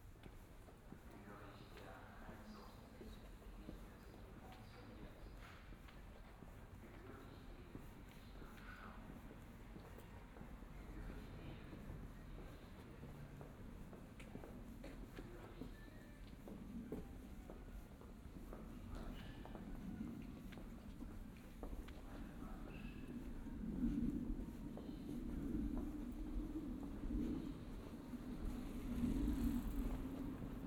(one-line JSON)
{"title": "Der Leerstand spricht Bad Orb - Der Leerstand spricht walk", "date": "2016-11-14 12:55:00", "description": "'Der Leerstand spricht' was a radio live performance / installation in Bad Orb. In front of empty houses of the Hauptstrasse radios were distributing the live voice, speaking texts but also inviting pedastrians to contribute their utopia of the city and the empty spaces: every empty building is a promise. Biaural recording of a walk down the street until a band is playing.", "latitude": "50.23", "longitude": "9.35", "altitude": "175", "timezone": "GMT+1"}